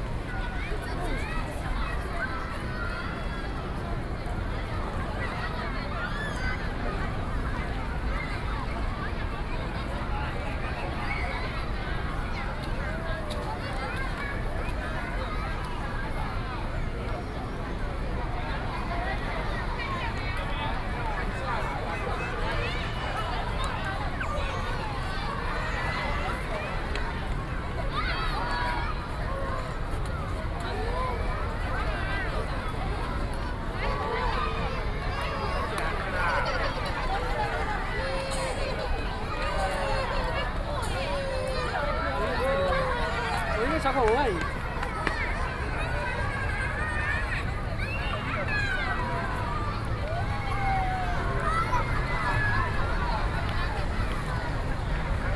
National Theater, Taipei - in the square